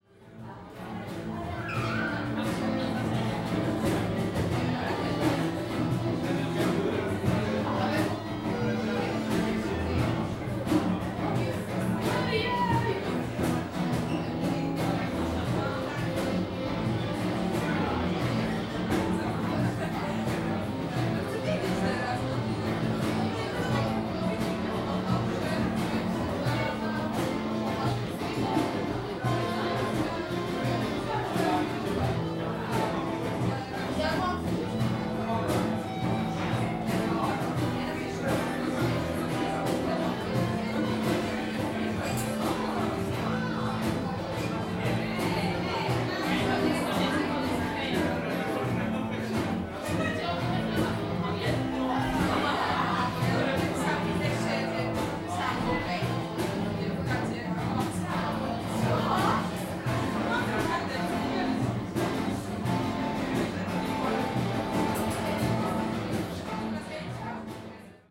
Wrzeszcz, Gdańsk, Polska - Late night jam
Late night music jam at one of Gdańsk's student clubs. Recorded with Zoom H2N.